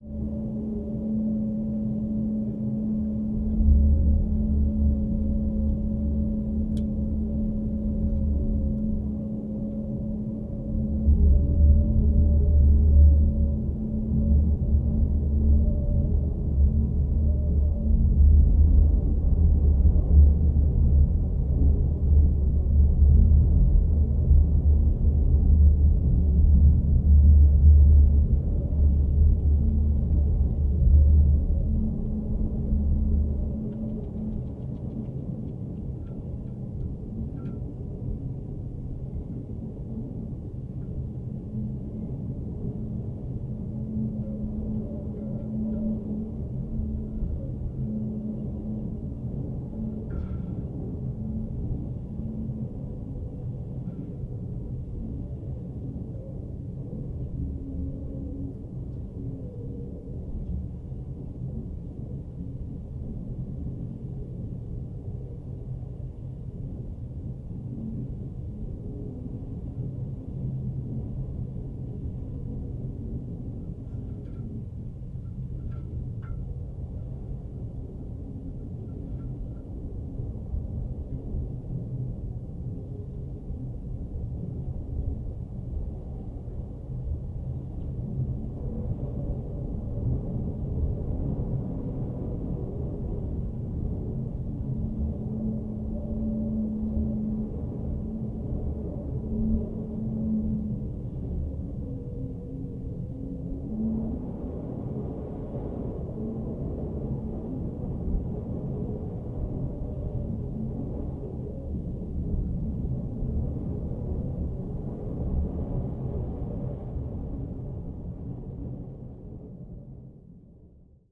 Puerto Percy, Primavera, Magallanes y la Antártica Chilena, Chile - storm log - puerto percy pier pipe drone
Puerto Percy, abandoned pier, rusty handrail inside pipe, wind SW 20km/h, ZOOM F!, XYH-6 cap
Campamento Puerto Percy, build by the oil company ENAP in 1950, abandoned in 2011. (Within the last two years the history substance was shrinking. Wild building material extraction destroyed the place dramatically.)
Región de Magallanes y de la Antártica Chilena, Chile